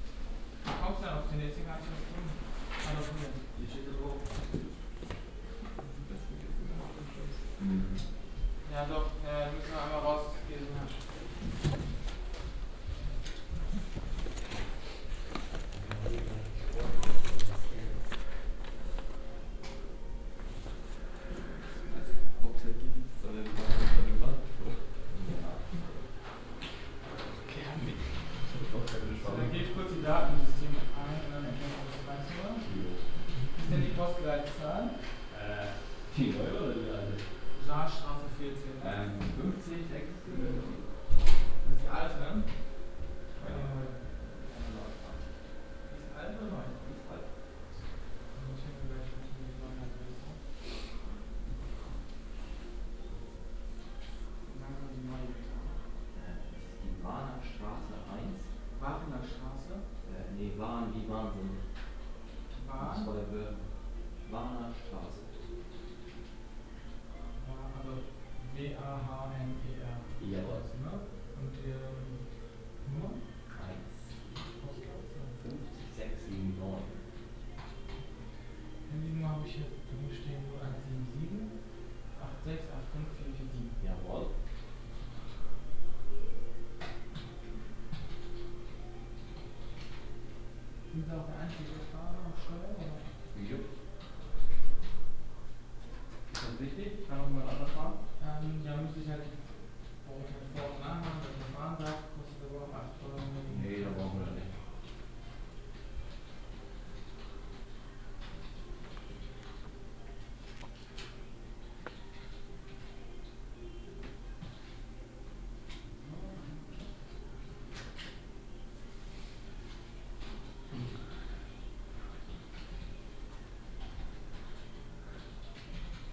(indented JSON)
{
  "title": "cologne, deutz, bruegelmannstr, autovermietung",
  "date": "2008-12-30 14:18:00",
  "description": "im büro einer autovermietung, morgens\nsoundmap nrw: social ambiences/ listen to the people - in & outdoor nearfield recordings",
  "latitude": "50.94",
  "longitude": "6.99",
  "altitude": "48",
  "timezone": "Europe/Berlin"
}